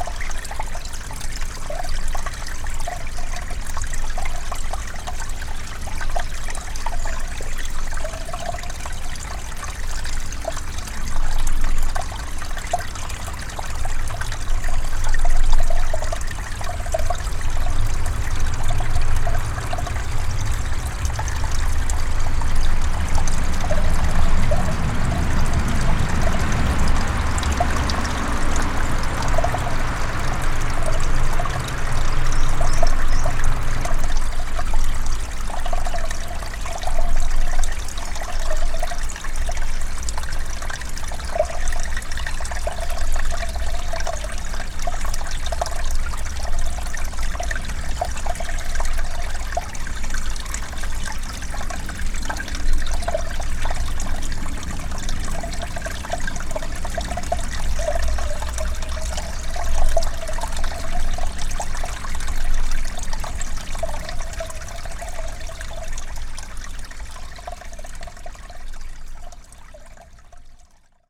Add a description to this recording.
A car passing nearby. SD-702, Me-64, NOS